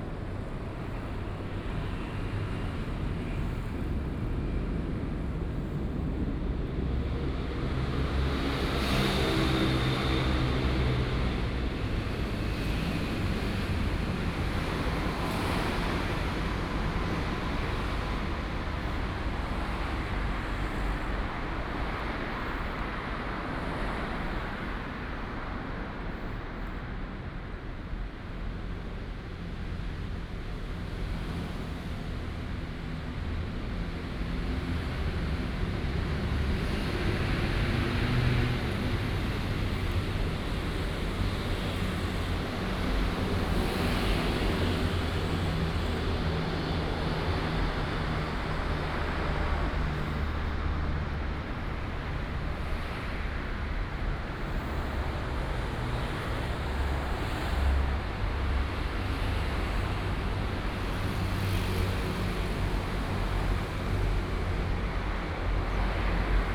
Xida Rd., East Dist., Hsinchu City - Traffic Sound
Below is the traffic underground channel, Traffic Sound